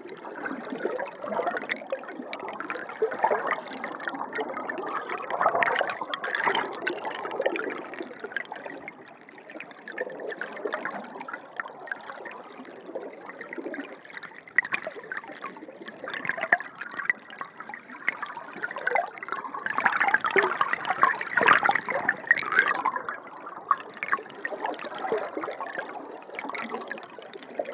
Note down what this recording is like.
Waterfall LONAUER (Underwater Sound Recording), I love underwater acoustics, which have many advantages compared to air acoustics. Original underwater recordings need not so much additional post processing later in the studio work because of its acoustics. TASCAM DR100-MKIII